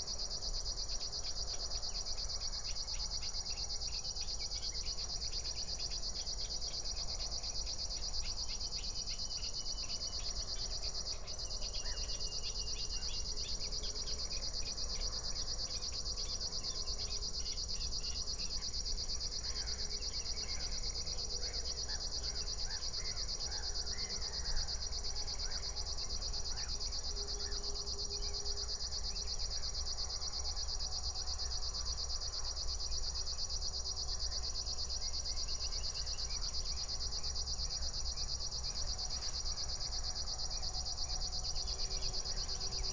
00:25 Berlin, Buch, Moorlinse - pond, wetland ambience